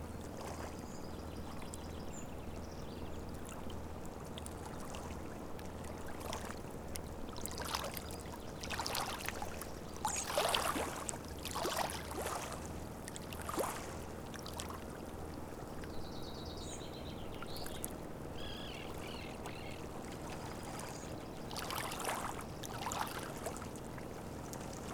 Croatia, Simuni Beach - Simuni Beach
deserted beach on a sunny windless morning. as the water gently touches the shoreline, a ship passes by at a 400 meter distance. WLD